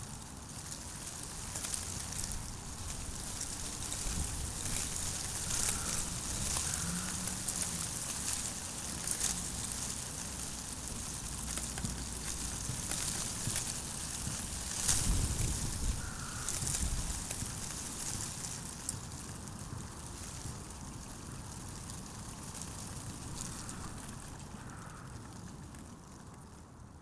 Quartier des Bruyères, Ottignies-Louvain-la-Neuve, Belgique - Spring wind
The wind in the arbours, in a very quiet park.
13 March, 14:00